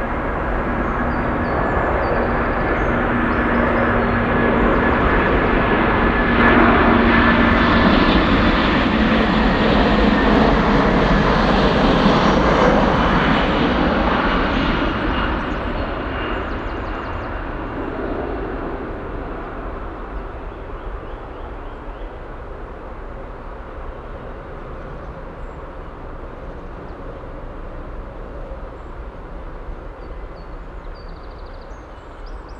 ratingen/ düsseldorf, wald nahe flughafen
flugzeugüberflug im wald hinter flugfeld, nachmittags
soundmap nrw:
social ambiences/ listen to the people - in & outdoor nearfield recordings